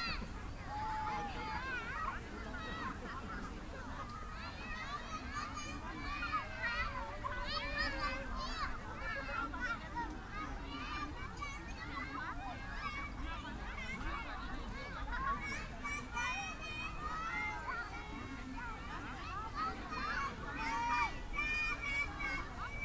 Berlin Tempelhofer Feld - kinderfest with kites
Berlin, Tempelhofer Feld, about 50 kids and their parents gathering, dozens of kites in the air
(SD702, DPA4060)
Deutschland, October 2019